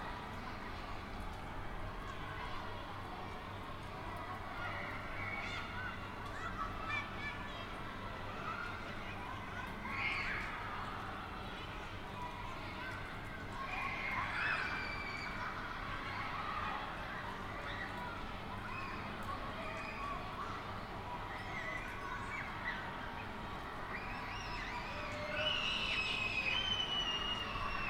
Savaanstraat, Gent, België - Sint-Barbaracollege
Children playing in the rain
Gent, Belgium